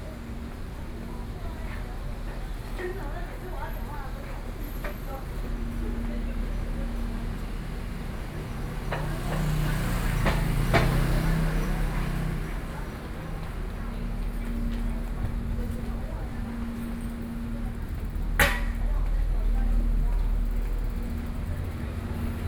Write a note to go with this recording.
walking out of the MR and the noise street, Sony PCM D50 + Soundman OKM II